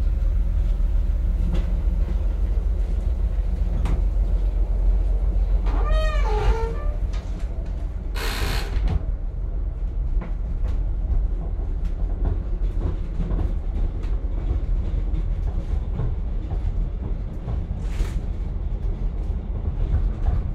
Narrow Gage Train Upper Silesia Poland